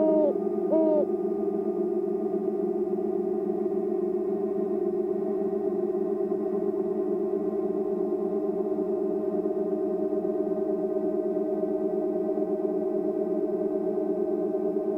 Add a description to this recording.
Metabolic Studio Sonic Division Archives: Recording of a hooting owl inside abandoned factory next to a large silo turned into an Aeolian Harp. Background droning tones are the harp itself which is a series of metal strings running along side the outside of silo. Two microphones are placed near the owl nest and near the aeolian harp/silo